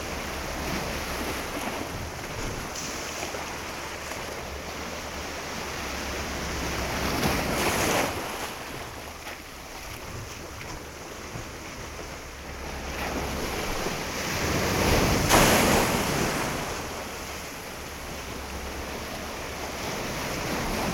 Kalkan, Turkey - 915e waves on the rocks
Binaural recording of waves hitting rocks in the small cove near the beach.
Binaural recording made with DPA 4560 on Tascam DR 100 MK III.
Antalya, Akdeniz Bölgesi, Türkiye